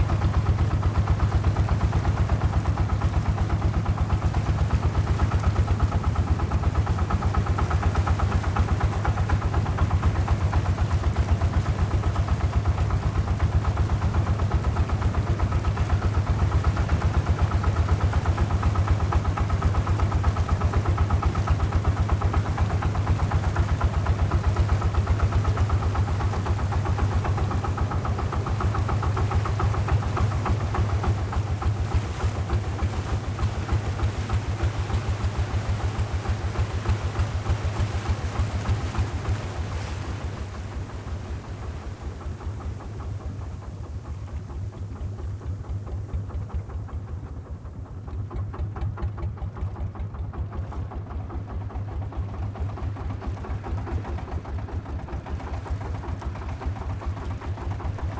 Fangyuan Township, Changhua County - Small truck traveling on the sea
Small truck traveling on the sea, Zoom H6